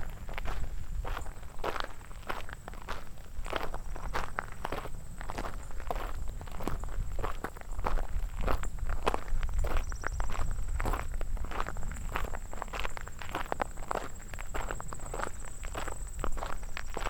Grass Lake Sanctuary - Driveway Soundwalk
These are the sounds of me opening and closing the mailbox on Grass Lake Road, and then walking up the long driveway, to the house where the Sanctuary's caretaker lives. At the end of the recording, you hear me ring the doorbell.
WLD, Grass Lake Sanctuary, field recording, Tom Mansell
MI, USA, 18 July, 03:41